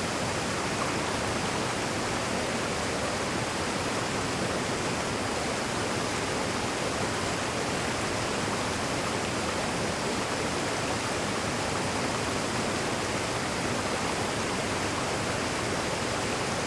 ERM fieldwork -mine water pump outlet
water pumped out from an oil shale mine 70+ meters below